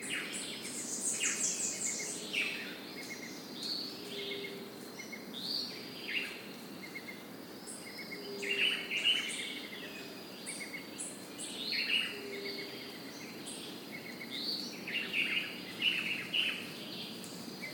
Parque da Cantareira - Núcleo do Engordador - Trilha da Mountain Bike - iii
Register of activity
- Tremembé, São Paulo - SP, Brazil, 20 December 2016